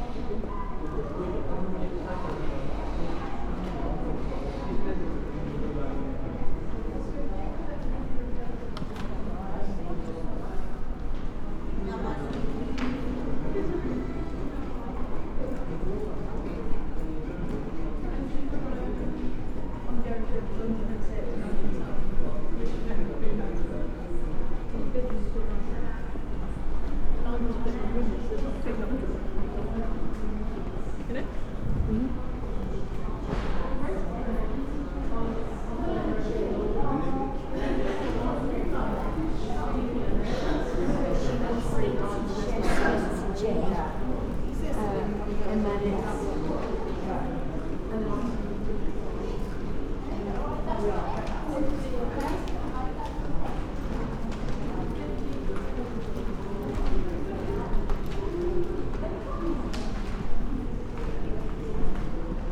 Audio Walk in Worcester City Centre, UK - Audio Walk in Worcester City Centre
A long post Covid walk around the centre of Worcester starting in a shopping precinct then out onto the streets, in and out of the cathedral, back along High Street and outside a cafe for lunch. We hear snatches of conversation and a street musician on a sunny day. The audio image changes constantly as I slowly wander around between pauses. All the recording equipment, a MixPre 6 II with 2 Sennheiser MKH 8020s, is carried in a small rucksack.